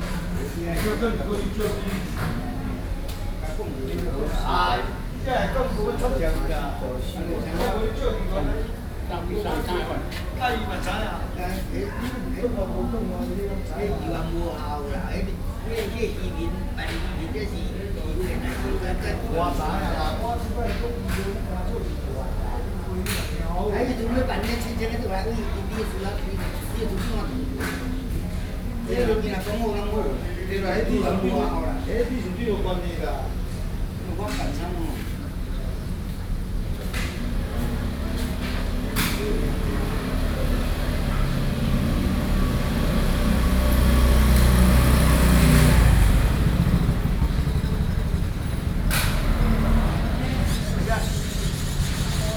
A group of old men sitting outside the community center chat Sony PCM D50 + Soundman OKM II
基隆市 (Keelung City), 中華民國, 24 June 2012, ~15:00